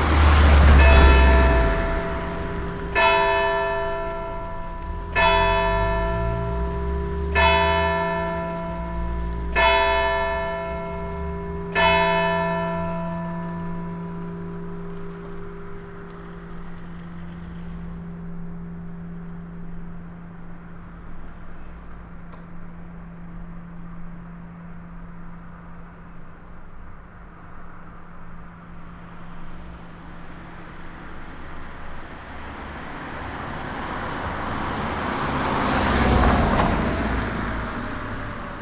Christmas evening but no mass in the village. SD-702/Me-64 NOS.
Niévroz, France